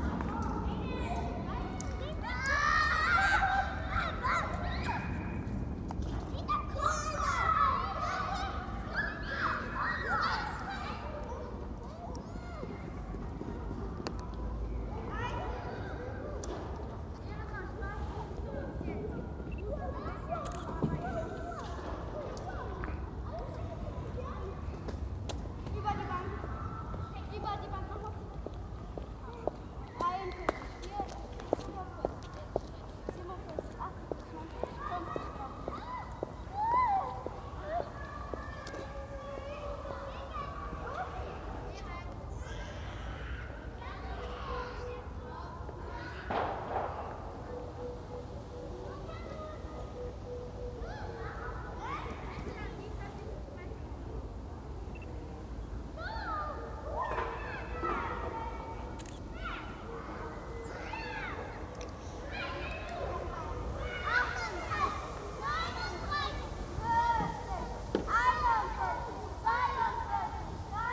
Gesundbrunnen, Berlin, Germany - Reverberant appartments - plane, high heels and a countdown to tears
The reverberation within the semi-circle of these flats is special. It must be a particularly strong sonic memory for those who live here.